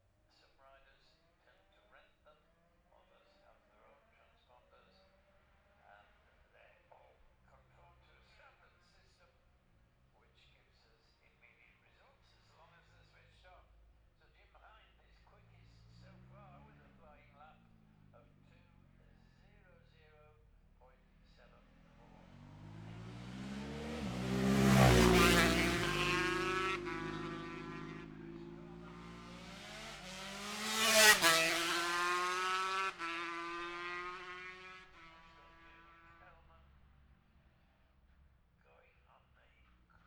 the steve henshaw gold cup 2022 ... lightweight practice ... dpa 4060s on t-bar on tripod to zoom f6